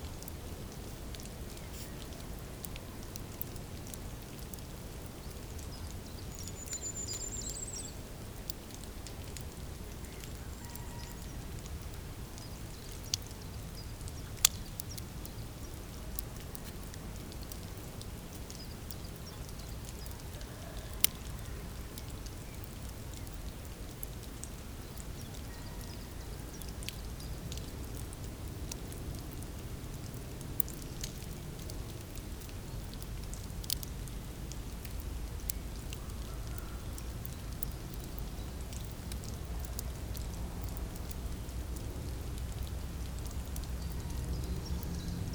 Genappe, Belgique - Ferns growing
A quite uncommon sound...
I was walking threw the forest. My attention was drawn to a strange sound in the pines, it was a permanent and strong cracking. I through of the pines, but, going near the trunks, I heard nothing. It was coming from the ground, into the ground or perhaps near the ground.
I through about insects, but digging, there were nothing special.
In fact, it's the ferns growing. Wishing to understand, I put a contact microphone into the ground, crackings were here too. Also, I went back in this forest by night, and there were nothing excerpt a beautiful moon. Crackings are here only with the sun, and (almost) only in the thick and dense heaps of dead ferns. Digging into, there's small green young ferns.
To record this sound, I simply put two binaural microphones in an heap of dead ferns. I guess the crackings comes from the new ferns, pushing hardly the ground into the humus.
Genappe, Belgium, 9 April 2017, ~15:00